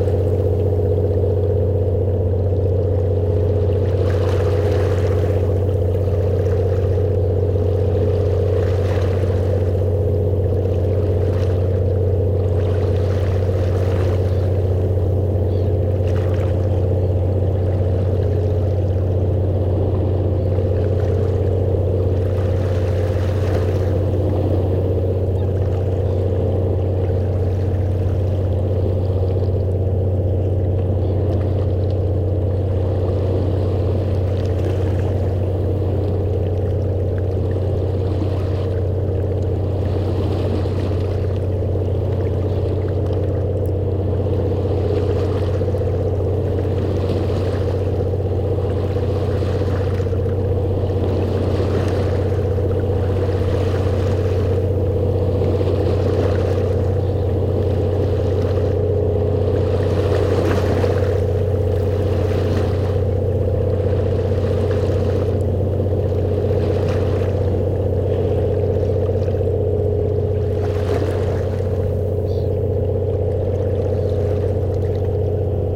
Breskens, Nederlands - Ferry leaving the harbour
On the Breskens harbour, a ferry is leaving. Princess Maxima boat is crossing the river and going to Vlissingen.